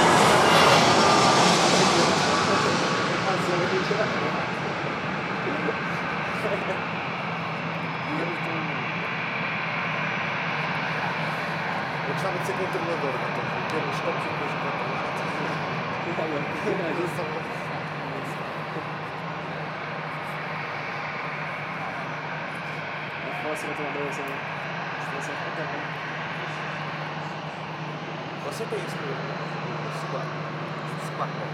Manchester International Airport - The Airport Pub
A pub called The Airport, just on the side of the runway 23R, Manchester International Airport.